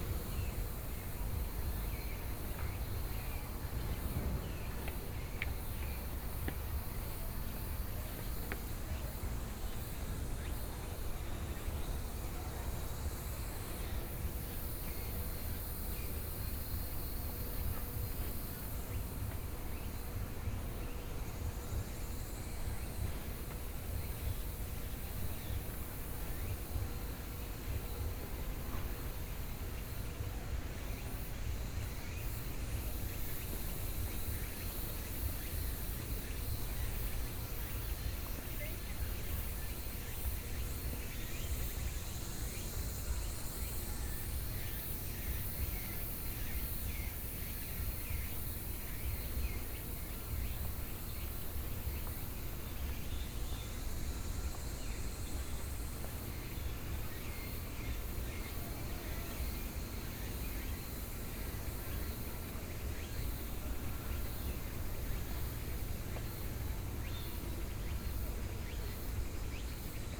HutoushanPark, Taoyuan City - soundwalk
walk in the Park, Sony PCM D50 + Soundman OKM II
Taoyuan City, Taoyuan County, Taiwan, September 2013